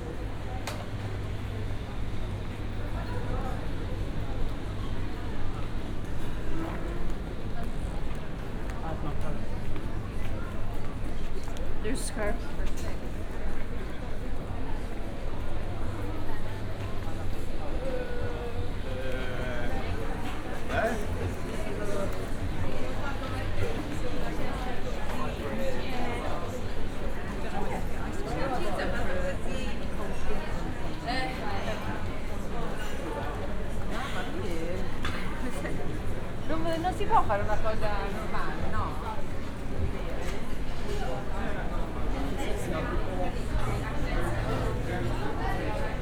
(binaural) making my way through the crowd packed on the tight streets of Monterosso. Passing by the many restaurants and cafes.
Monterosso Al Mare SP, Italy